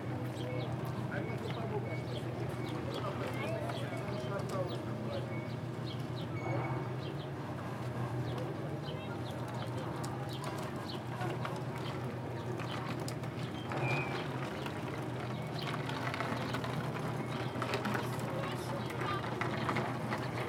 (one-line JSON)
{"title": "Lithuania - Middle of the Harbour", "date": "2016-07-26 18:05:00", "description": "Recordist: Saso Puckovski\nDescription: Middle of the harbour between a restaurant and a crane. Harbour bell, wooden boats crackling and tourists passing by. Recorded with ZOOM H2N Handy Recorder.", "latitude": "55.30", "longitude": "21.01", "timezone": "Europe/Vilnius"}